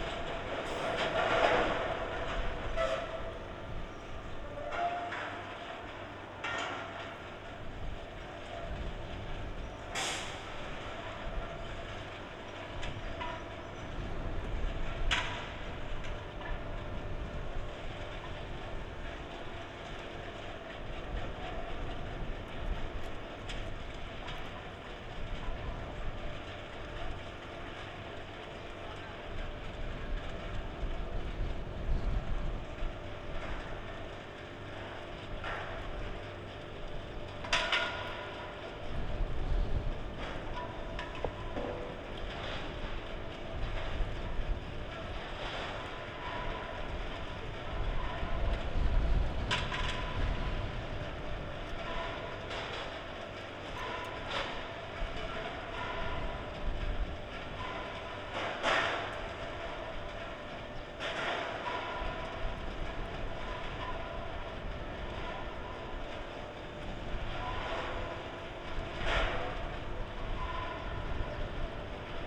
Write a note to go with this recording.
Liquigas bottling plant at work, from a distance. Difficult to record because of strong wind at Malta's south coast, (SD702, AT BP4025)